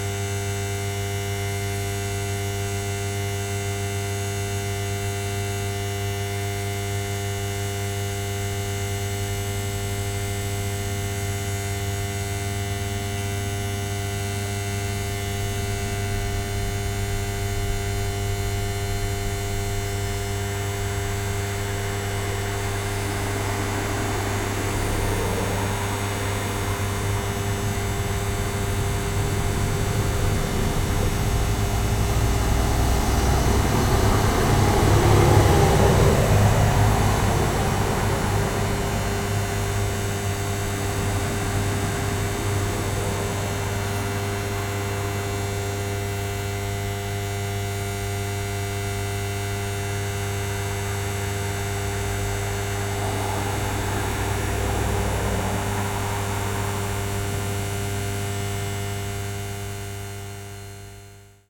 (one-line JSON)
{"title": "Marysienki housing estate, Piatkowo district, Poznan - converter box", "date": "2018-09-15 22:54:00", "description": "small converter box buzzing fiercely. quite interesting that the buzz isn't stable as if it was modulated by some source. (roland r-07 internal mics)", "latitude": "52.46", "longitude": "16.90", "altitude": "102", "timezone": "Europe/Warsaw"}